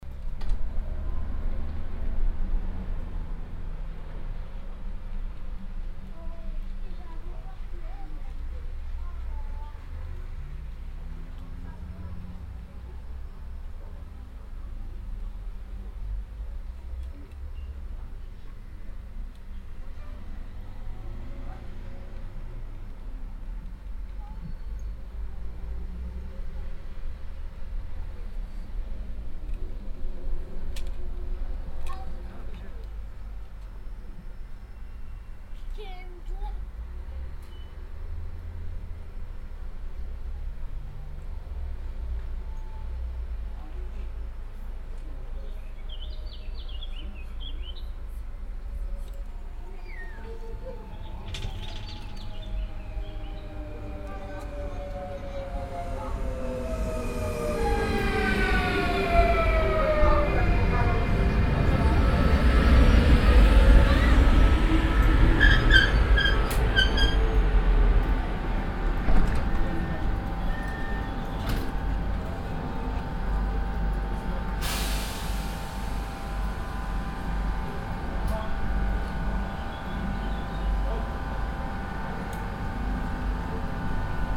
clervaux, train station
At the Clervaux train station at noon on a hot and windy summer day.
People waiting for the hourly arriving train. The train drives into the station, people enter, a whistle, the train leaves.
Clervaux, Bahnhof
Am Bahnhof von Clervaux um die Mittagszeit an einem heißen und windigen Sommertag. Menschen warten auf den stündlich eintreffenden Zug. Der Zug fährt in den Bahnhof ein, Menschen steigen ein, ein Pfeifen, der Zug fährt ab.
Clervaux, gare ferroviaire
Midi à la gare ferroviaire de Clervaux, un jour d’été chaud et venteux. Des personnes attendent le train qui arrive toutes les heures. Le train entre en gare, des passagers montent, le train repart.
Project - Klangraum Our - topographic field recordings, sound objects and social ambiences